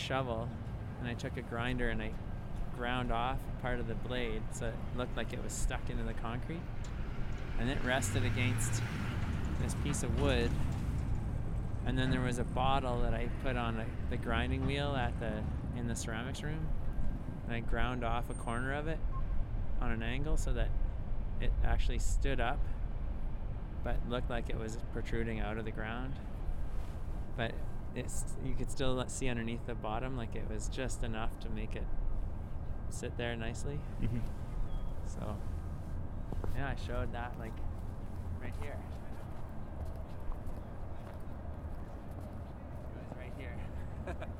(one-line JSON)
{"title": "East Village, Calgary, AB, Canada - Shovel Art Installation", "date": "2012-04-09 19:54:00", "description": "This is my Village\nTomas Jonsson", "latitude": "51.05", "longitude": "-114.05", "altitude": "1042", "timezone": "America/Edmonton"}